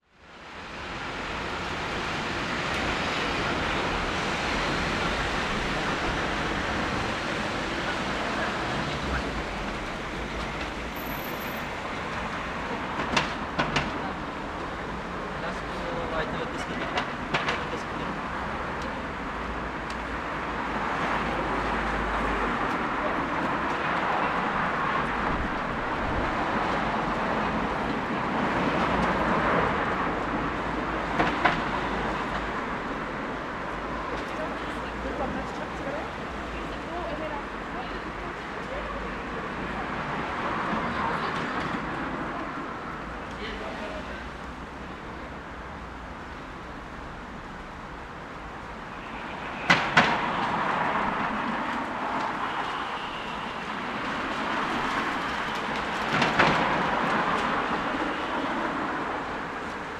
Dublin Rd, Belfast, UK - Dublin Road
Recording in front of two bars which are now closed (Filthy’s and The Points), a little number of pedestrians and vehicles passing, the sound of a skateboarder, little bit of wind. This is five days after the new Lockdown 2 in Belfast started.
Northern Ireland, United Kingdom